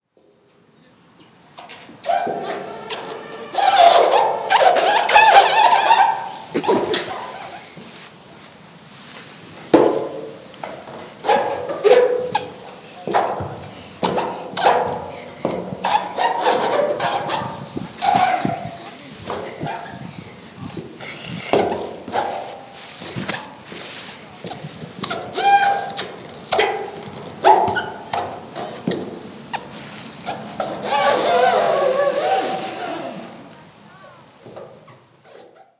berlin - grimm slide
grimmplatz, slide, rutsche, playground, spielplatz